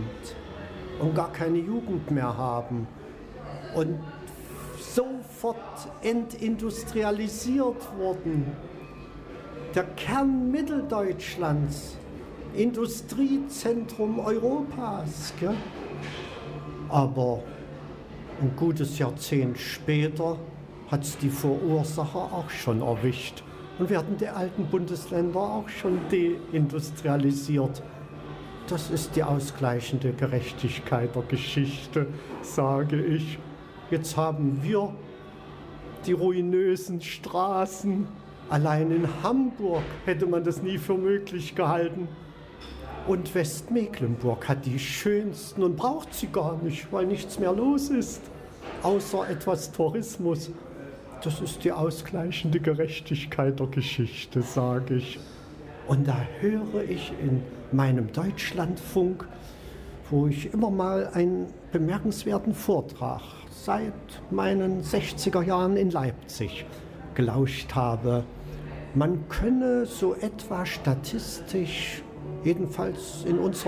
Produktion: Deutschlandradio Kultur/Norddeutscher Rundfunk 2009